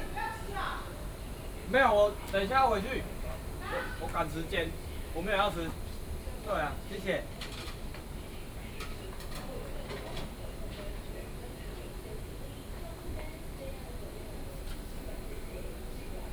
綠屋小鑽, 桃米里 - In the small restaurant
In the small restaurant, Traffic Sound